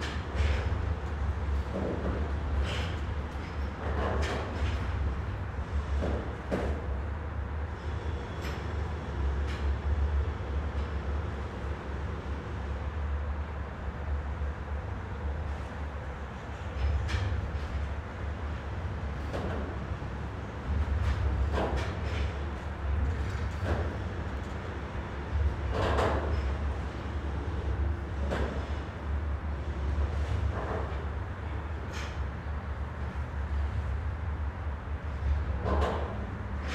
Creaking sounds from the buoyant platform.
United States, February 2022